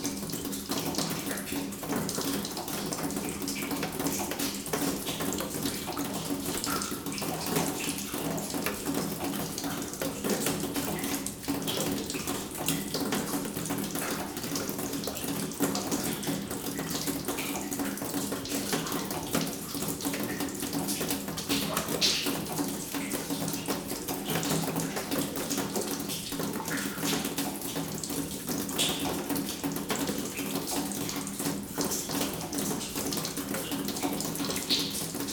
In an underground mine, in the middle of a tunnel, water is falling on a rotten sheet metal.
Hussigny-Godbrange, France